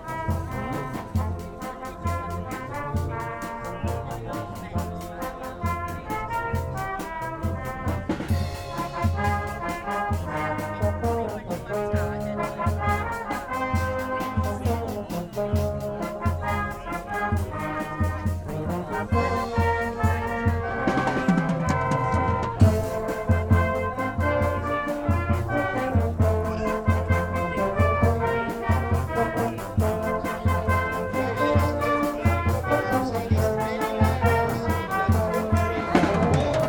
Mackeridge Ln, York, UK - Farndale Show ... Silver band ...
Farndale Show Bilsdale Silver band ... walk pass ... lavalier mics clipped to baseball cap ...